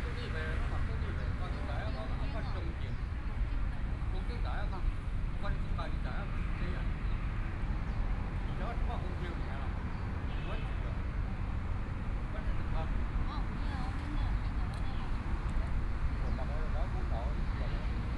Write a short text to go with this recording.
Walking through the park, Aircraft flying through, Traffic Sound